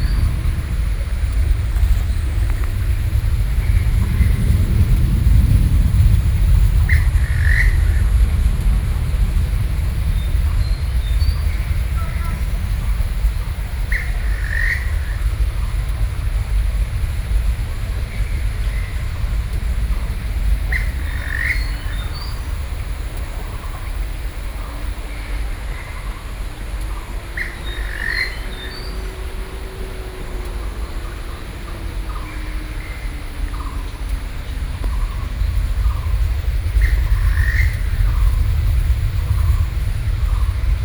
Xizhi, New Taipei City - Nature sounds
New Taipei City, Taiwan, 16 July 2012, ~08:00